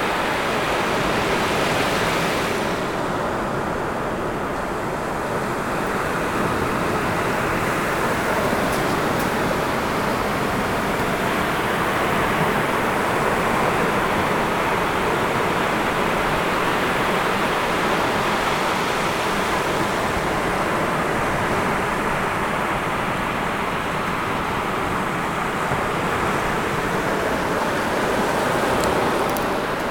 The sea at Strandreservaat Noordzand.
Bentveld, Netherlands